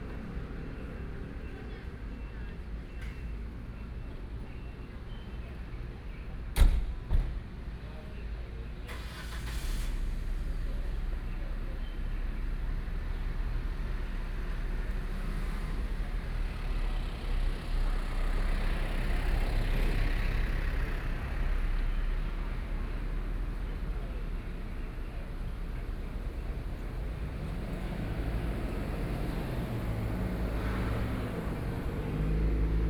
Dayong Rd., Yancheng Dist. - Standing on the roadside
Standing on the roadside, Traffic Sound, Tourist, Birdsong
Binaural recordings, Sony PCM D50 + Soundman OKM II